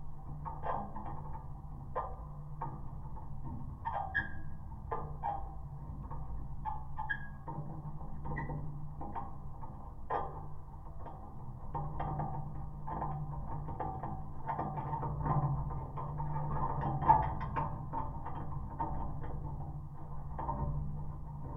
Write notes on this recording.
Winter skiing tracks and lifts. Geophone on flag stick.